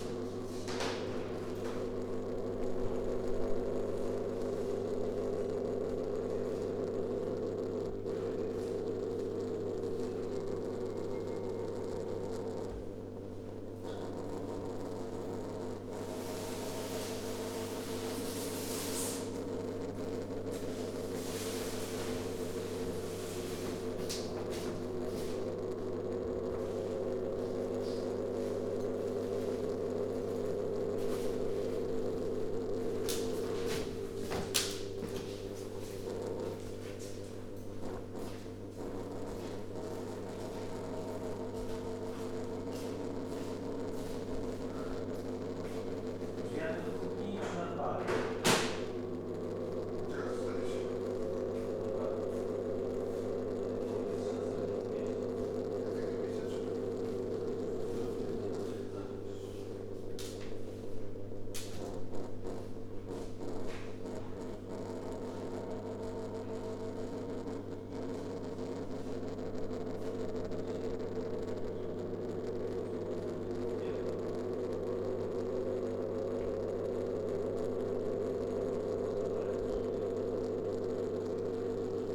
Srem, at Kosmos club - disco ball
an old, dusty glitter ball spinning and wheezing.
Srem, Poland, 4 November 2013, ~02:00